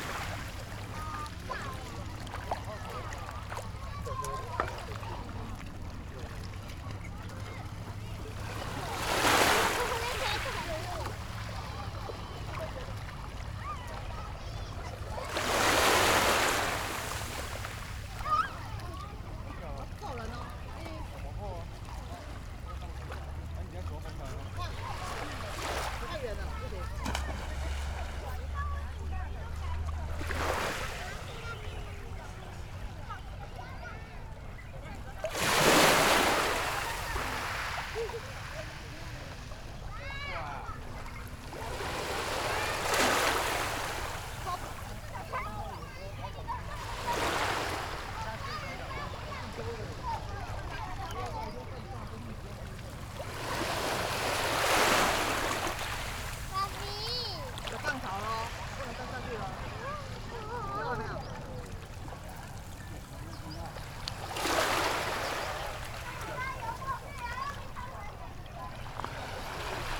{
  "title": "豆腐岬, Su'ao Township - Sound of the waves",
  "date": "2014-07-28 16:31:00",
  "description": "Sound of the waves, At the beach, Tourist, Birdsong sound\nZoom H6 MS+ Rode NT4",
  "latitude": "24.58",
  "longitude": "121.87",
  "altitude": "13",
  "timezone": "Asia/Taipei"
}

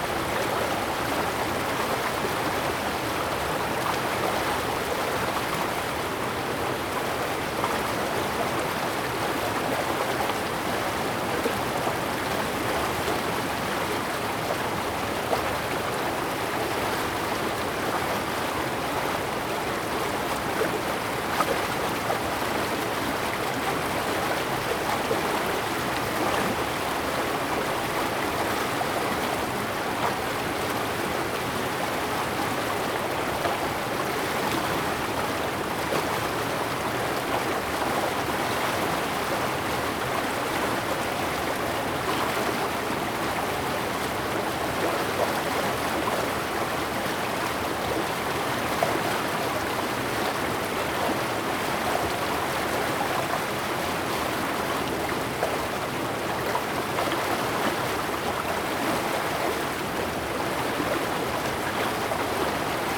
{
  "title": "溪底田, Taimali Township, Taitung County - Water sound",
  "date": "2018-03-14 09:35:00",
  "description": "Agricultural irrigation channel, Water sound\nZoom H2n MS+ XY",
  "latitude": "22.60",
  "longitude": "120.99",
  "altitude": "26",
  "timezone": "Asia/Taipei"
}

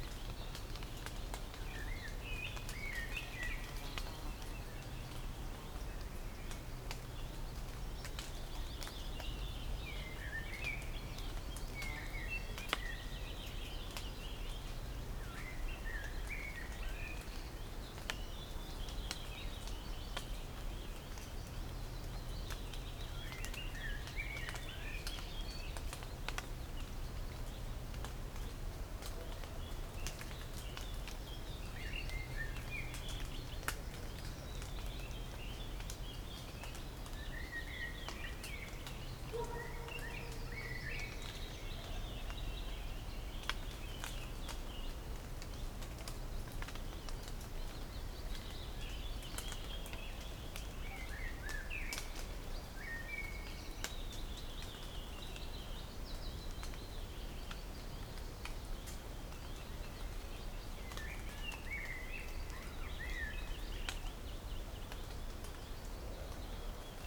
{
  "title": "Sasino, forest - fire after rain",
  "date": "2013-06-28 18:24:00",
  "description": "forest ambience after heavy rain, lots of fat drops sliding down from the leaves. water splashing on the ground, branches, moss but the sonic sensation was similar to one sitting in front of a campfire due to the dynamic crackling.",
  "latitude": "54.76",
  "longitude": "17.74",
  "altitude": "27",
  "timezone": "Europe/Warsaw"
}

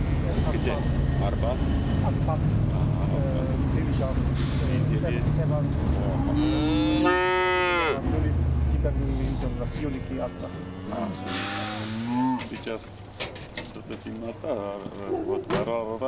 {
  "title": "Artashat, Armenia - Cattle Market near Artashat, Armenia",
  "date": "2016-02-14 09:03:00",
  "description": "Leaving Artashat, the regional administrative capital, behind, we come to an improvised cattle market alongside the road. Sheep, cows, dogs and men standing in the grass and the mud, making deals. We strike up a conversation with one of the men and, as always happens in the Caucasus, he invites us to visit him if we are ever in his town.",
  "latitude": "39.94",
  "longitude": "44.56",
  "altitude": "819",
  "timezone": "Asia/Yerevan"
}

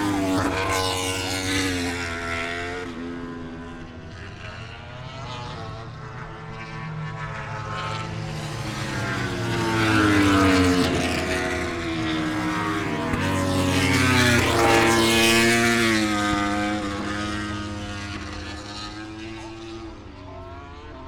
{
  "title": "Silverstone Circuit, Towcester, UK - British Motorcycle Grand Prix 2017 ... moto grand prix ...",
  "date": "2017-08-26 13:30:00",
  "description": "moto grand prix ... free practice four ... Becketts Corner ... open lavaliers clipped to a chair seat ... all sorts of background noise from helicopters to commentary ... needless to say it's a wee bit noisy ...",
  "latitude": "52.07",
  "longitude": "-1.01",
  "altitude": "156",
  "timezone": "Europe/London"
}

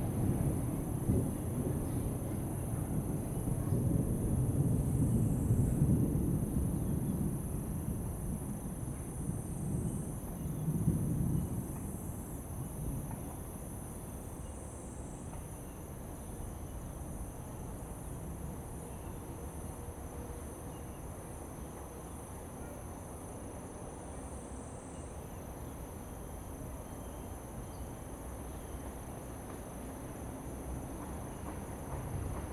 {
  "title": "和美山步道, 新店區, New Taipei City - In the woods",
  "date": "2015-07-28 15:31:00",
  "description": "In the woods, Thunder sound, Traffic Sound, birds sound\nZoom H2n MS+ XY",
  "latitude": "24.96",
  "longitude": "121.53",
  "altitude": "32",
  "timezone": "Asia/Taipei"
}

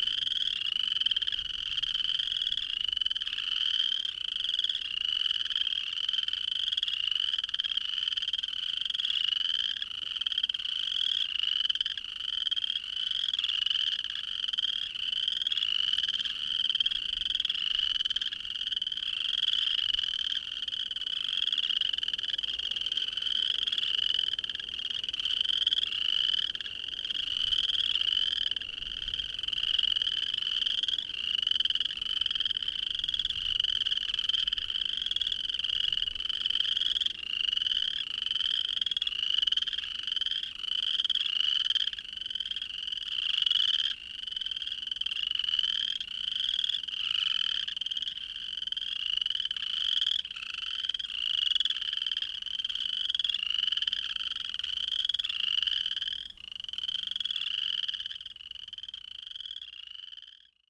{
  "title": "frog pond near old coal mine, Rosedale Alberta",
  "date": "2010-04-20 21:48:00",
  "description": "loud chorus of chirping frogs in a pond below the old coal mine",
  "latitude": "51.42",
  "longitude": "-112.61",
  "altitude": "698",
  "timezone": "Europe/Tallinn"
}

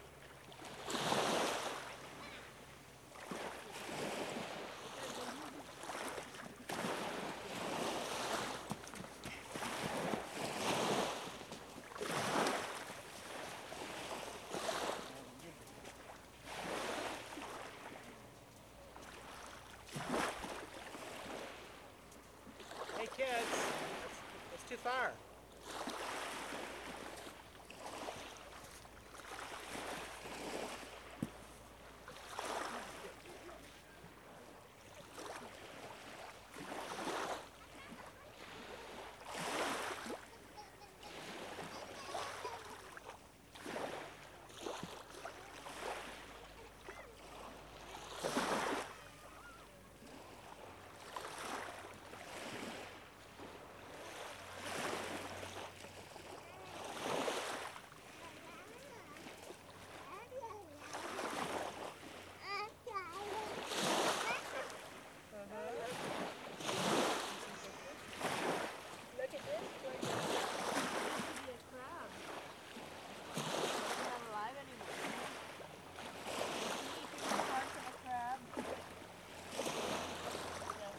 {
  "title": "Carkeek Park",
  "date": "2002-12-15 12:15:00",
  "description": "Tiny wavelets brush the shore as the sun sets on a calm December day at this waterfront park.\nMajor elements:\n* Wavelets\n* Mallards and seagulls\n* Beachcombers\n* Seaplanes\n* Alas, no Burlington-Northern train (which runs along the waterfront)",
  "latitude": "47.71",
  "longitude": "-122.38",
  "altitude": "1",
  "timezone": "America/Los_Angeles"
}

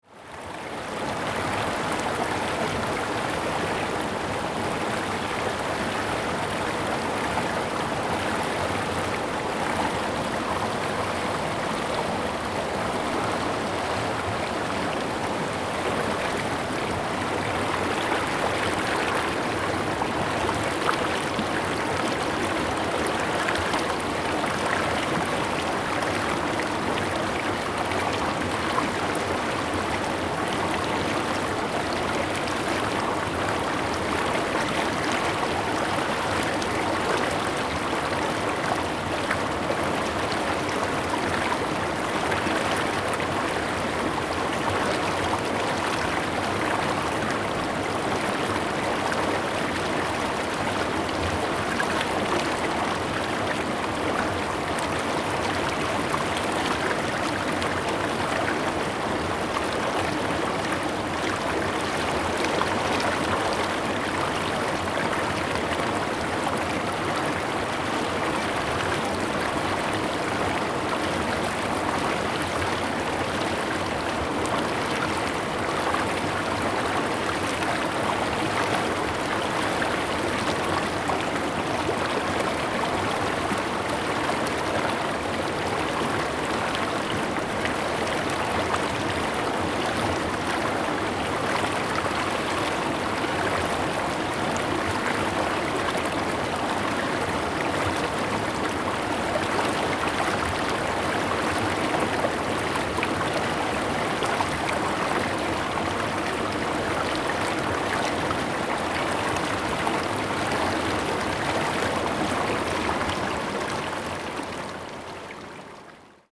{
  "title": "種瓜坑溪, 成功里, Puli Township - Brook",
  "date": "2016-04-19 15:39:00",
  "description": "Brook, In the river\nZoom H2n MS+XY",
  "latitude": "23.96",
  "longitude": "120.89",
  "altitude": "428",
  "timezone": "Asia/Taipei"
}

